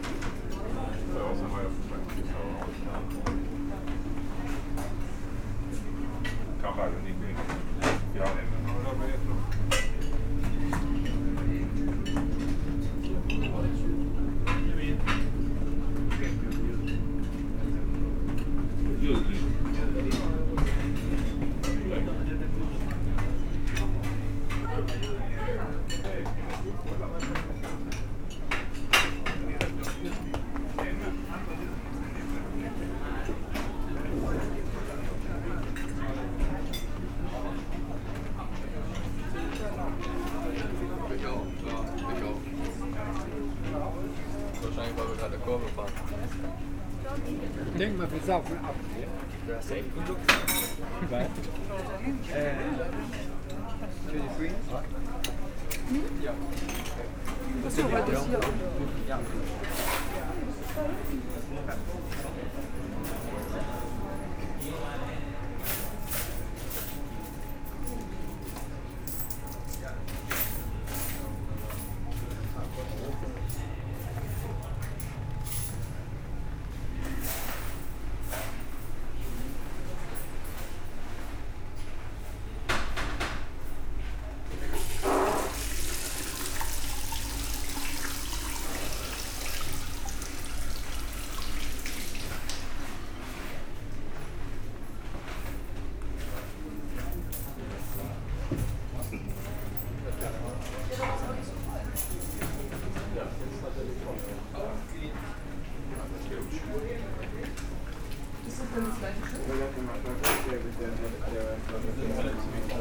On the Femern Bælt, a strait separating Germany (town Puttgarden) to Denmark (town Rødby). The link is made by a ferry. Walking into the boat, some various sounds of the rooms. All the bottles placed in the shops vibrate !